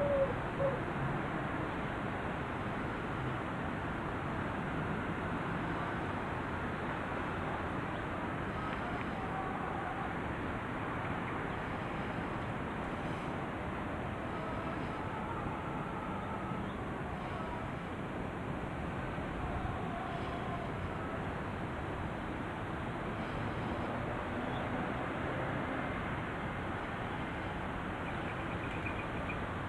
Ce jour-là mes pas m’ont emmené dans un quartier fait de ruelles & d’escaliers labyrinthiques, en direction de ND de la garde, depuis l’est, jusque, tout en-haut, une voie sans issue.
Un peu essoufflé j’ai écouté la ville d’en haut : parmi les propriétés & jardins, un pigeon ramier lançait son chant d’amour & un ténor travaillait ses vocalises.
There was this research on “silence in Marseille” which questioned the silence in the city and what it allows to hear. That day my steps took me to a labyrinthic hill made of alleys & maze stairs, in the direction of the ND de la garde, from the east, to the very top, a dead end.
A little breathless I listened to the city from above: among the villas & gardens, a rummy pigeon launched his song of love & a tenor worked his vocalizations.
Provence-Alpes-Côte dAzur, France métropolitaine, France